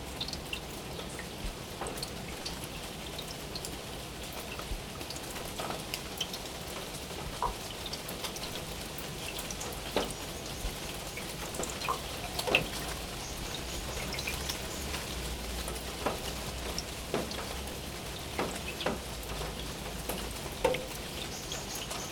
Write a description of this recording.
Rain drumming on the roof top of the public toilet in the City park of Maribor. Recorded with Zoom H4